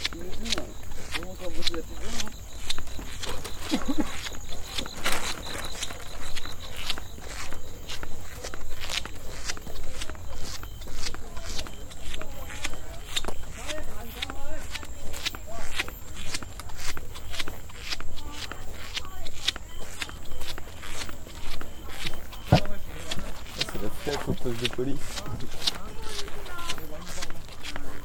{
  "title": "Loïc walking after swam, Lamma island, may 2007",
  "latitude": "22.22",
  "longitude": "114.12",
  "altitude": "16",
  "timezone": "GMT+1"
}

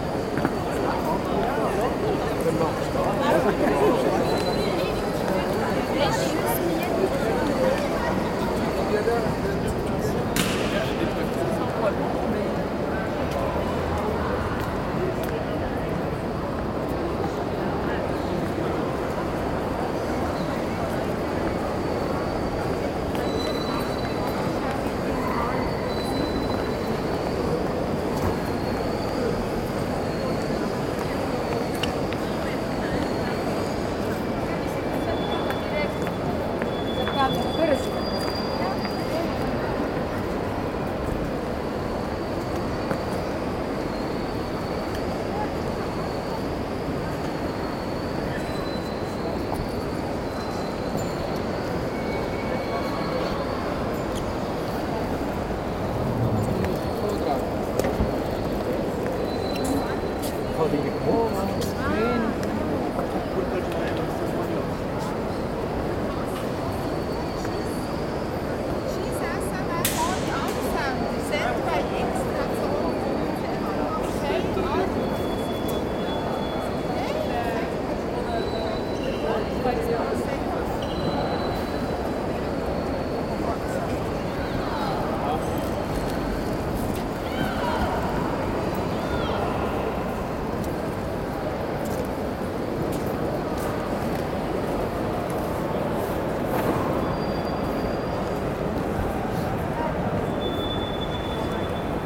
{
  "title": "zurich main station, hall",
  "description": "recorded june 16, 2008. - project: \"hasenbrot - a private sound diary\"",
  "latitude": "47.38",
  "longitude": "8.54",
  "altitude": "409",
  "timezone": "GMT+1"
}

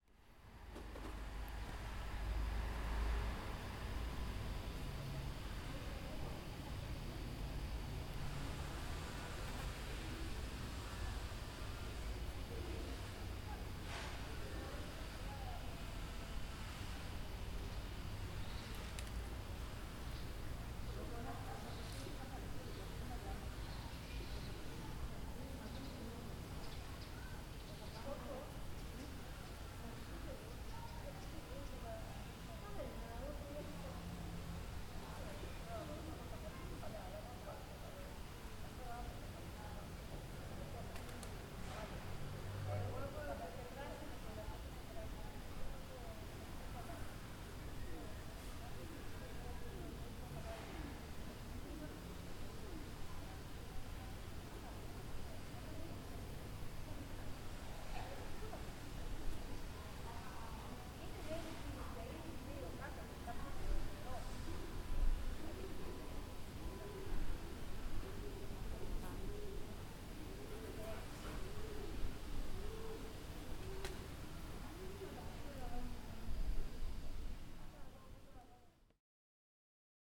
{
  "title": "Pieri, Corfu, Greece - Cambielo Square - Πλατεία Καμπιέλο",
  "date": "2019-04-17 11:23:00",
  "description": "Birds tweeting. People chatting in the background.",
  "latitude": "39.63",
  "longitude": "19.92",
  "altitude": "17",
  "timezone": "GMT+1"
}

